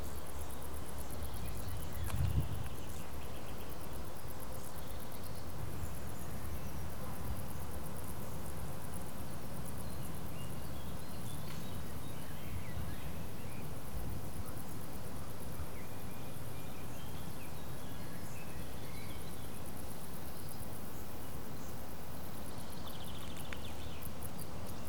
Morasko nature reserve, path towards water tanks - meadow ambience
Poznan, Poland